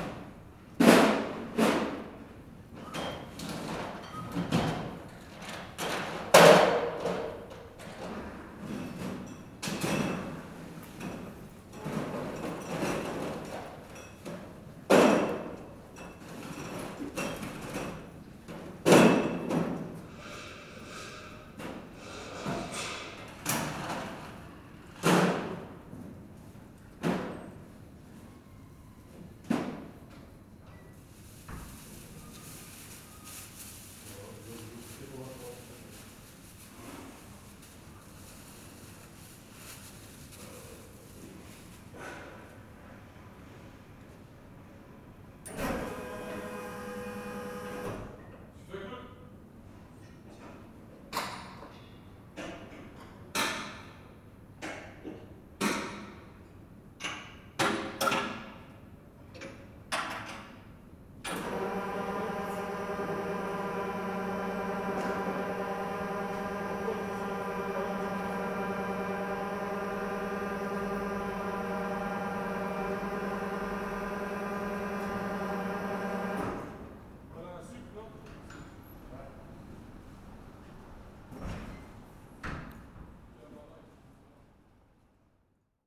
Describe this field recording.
Delivery men unload beers and beers and beers...